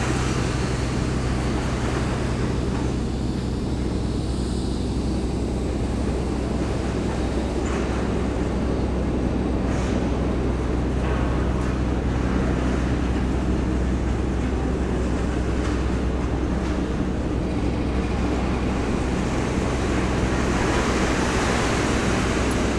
Industrial soundscape near the Thy-Marcinelle wire-drawing plant, a worker moving an enormous overhead crane, and charging rolls of steel into an empty boat.